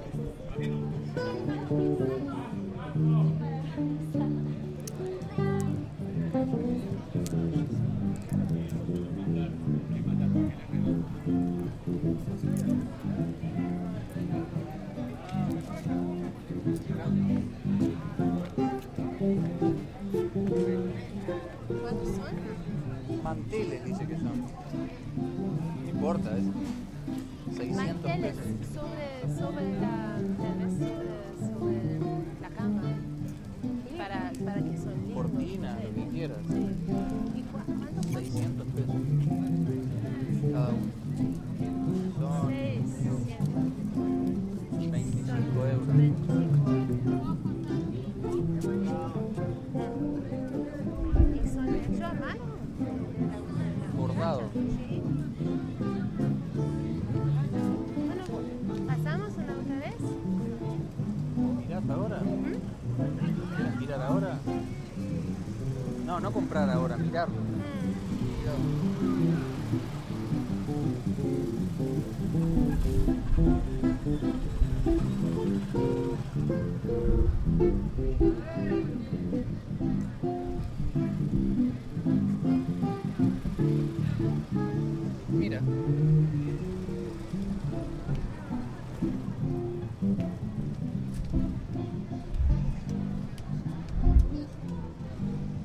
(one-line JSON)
{"title": "Feria Tristan Narvaja, Montevideo, Uruguay - mercadillo y guitarra", "date": "2011-03-25 13:21:00", "description": "We are wondering about the fleemarket of tristan narvaja. accompanied by a guitar player and his soft tunes. I like the transparent curtain.", "latitude": "-34.90", "longitude": "-56.18", "altitude": "23", "timezone": "America/Montevideo"}